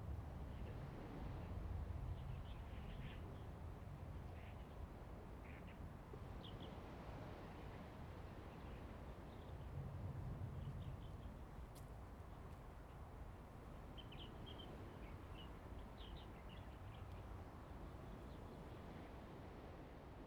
At the beach, In the woods, Sound of the waves, Aircraft flying through
Zoom H2n MS+XY
福建省, Mainland - Taiwan Border, 3 November 2014, 14:47